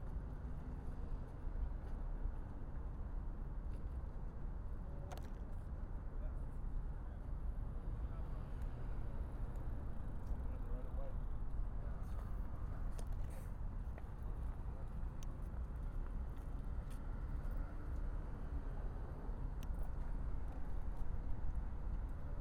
Commonwealth Avenue, Boston, MA, USA - USA Luggage Bag Drag 3
Recorded as part of the 'Put The Needle On The Record' project by Laurence Colbert in 2019.